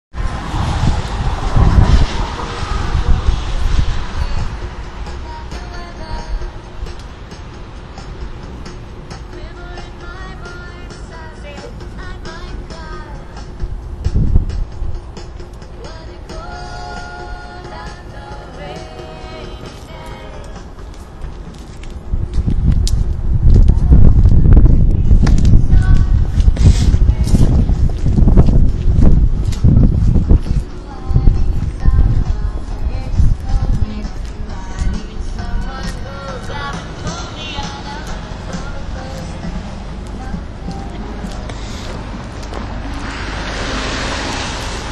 music outside at jefferson circle
jefferson circle, boutique
NY, USA, January 2011